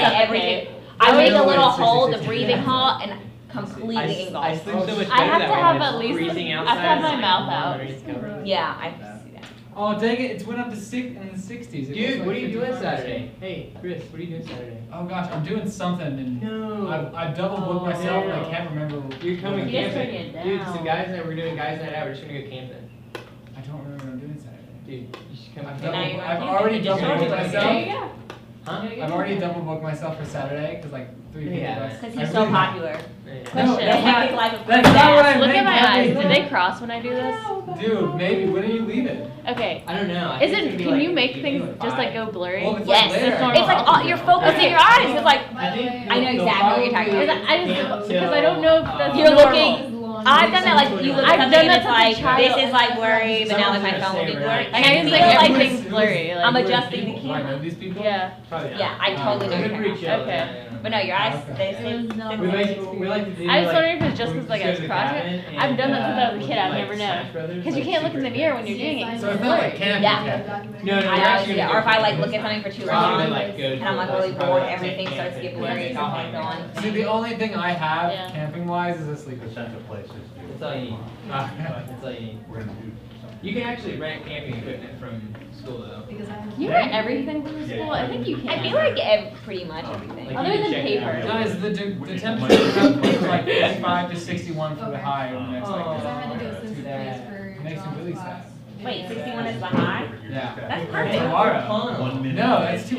This is students chatting in the ASU CI4860 Audio Documentary class before class begins.